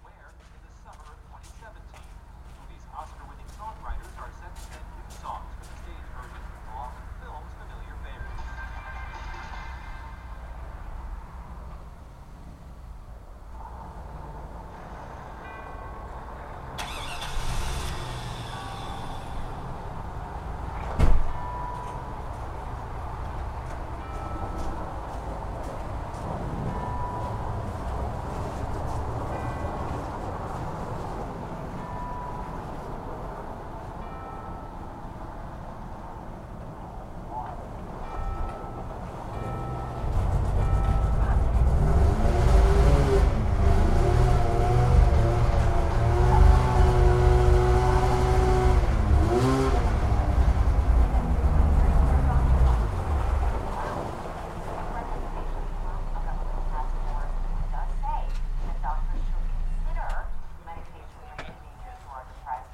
Pleasanton Hwy, Bear Lake, MI USA - Refueling, Video Reverb & Snowmobile Roaring
From pump 1, on the north side of the gas station, a video loop reverberates across the property as it issues forth from the other pumps' monitors. All is drowned out by the arrival and departure of snowmobiles. Boisterous young men can be heard. Other vehicles come, refuel and go. A late Saturday night at Saddle Up Gas & Grocery, on the east side of Bear Lake. Stereo mic (Audio-Technica, AT-822), recorded via Sony MD (MZ-NF810, pre-amp) and Tascam DR-60DmkII.